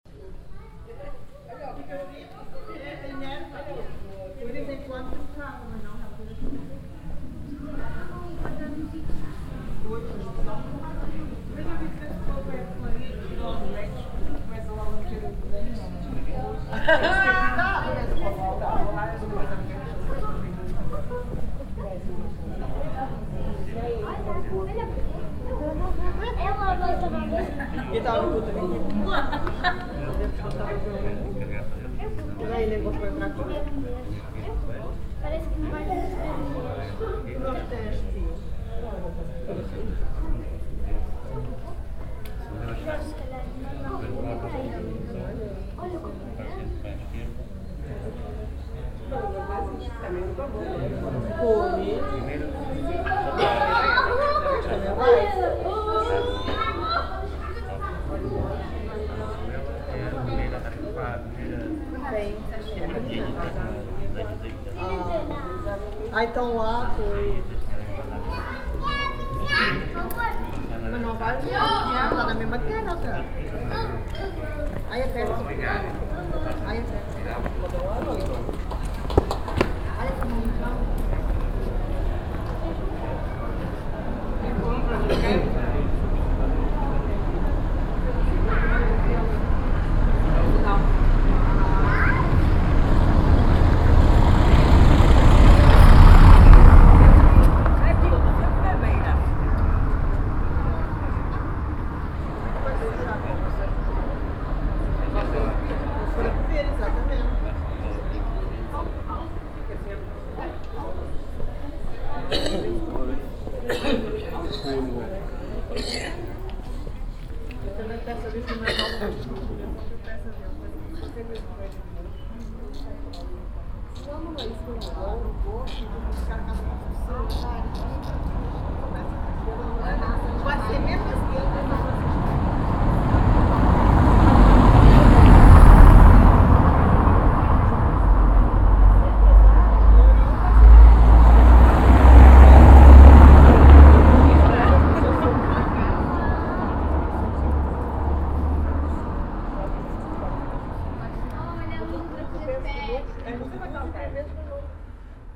vianden, grand rue, night talk and traffic
In the night time. A group of Village inhabitants with children standing in front of their houses talking while some cars drive through the narrow road.
Vianden, Hauptstraße, Nachtgespräche und Verkehr
Zu später Stunde. Einige Einheimische stehen mit ihren Kindern vor ihren Häusern und unterhalten sich, während ein paar Autos auf der engen Straße fahren.
Vianden, grand rue, discussions nocturnes et trafic
La nuit. Un groupe d’habitants du village avec des enfants discutent devant leurs maisons ; des voitures passent sur la route étroite.
Project - Klangraum Our - topographic field recordings, sound objects and social ambiences
Vianden, Luxembourg, 8 August 2011, ~18:00